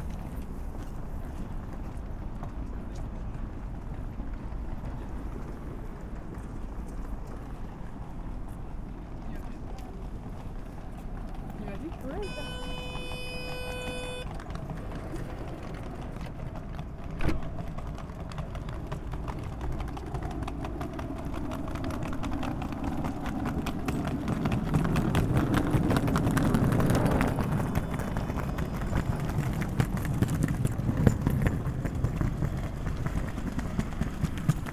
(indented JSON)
{
  "title": "Centre, Ottignies-Louvain-la-Neuve, Belgique - LLN station",
  "date": "2016-03-12 17:01:00",
  "description": "The students are going back home at the end of the week. There's a lot of suitcases.",
  "latitude": "50.67",
  "longitude": "4.62",
  "altitude": "117",
  "timezone": "Europe/Brussels"
}